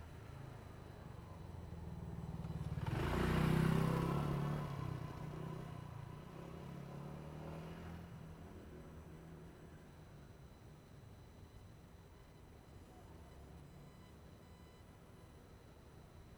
in the Railroad Crossing, Traffic sound, The train runs through
Zoom H2n MS+XY